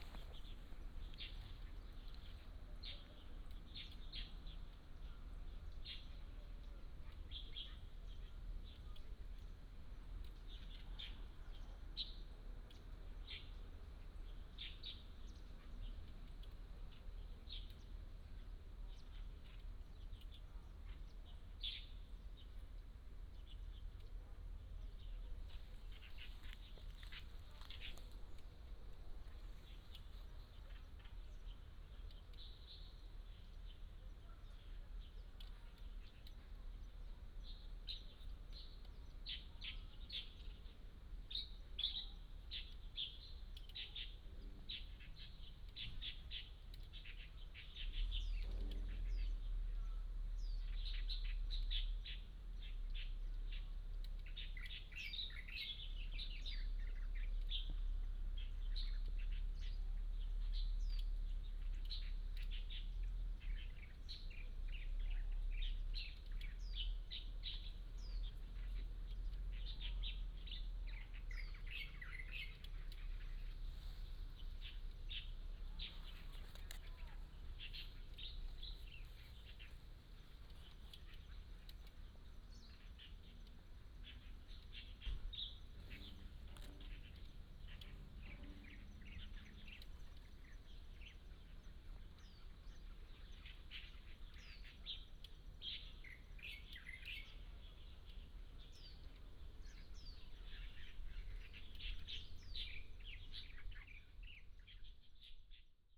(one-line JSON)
{"title": "清水村, Nangan Township - Birdsong", "date": "2014-10-14 14:52:00", "description": "Birdsong\nBinaural recordings\nSony PCM D100+ Soundman OKM II", "latitude": "26.15", "longitude": "119.94", "altitude": "55", "timezone": "Asia/Taipei"}